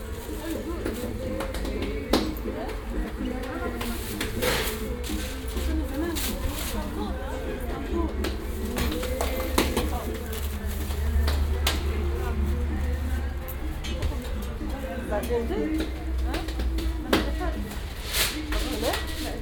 kottbusser damm, arab pide - 16 stück
19.03.2009 14:00, 16 pieces od arab pide bread
19 March 2009, 14:00